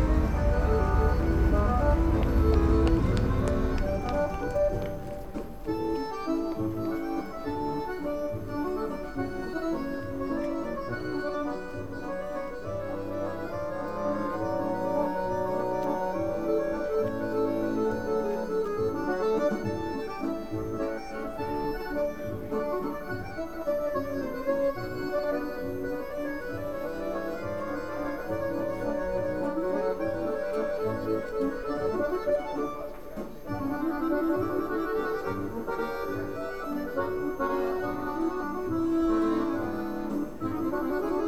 Anykščiai, Lithuania, train station
waiting for a tourists train
31 August 2014, 10:50